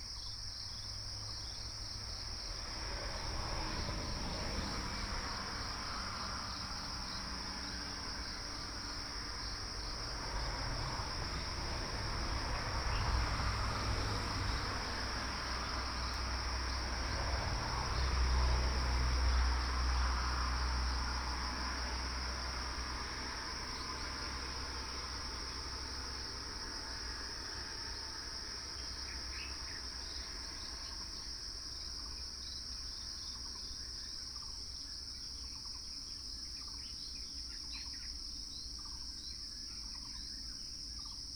景山橋, Zhuolan Township - Birds and Cicadas
Birds and Cicadas, Near the reservoir, A variety of bird sounds, Binaural recordings, Sony PCM D100+ Soundman OKM II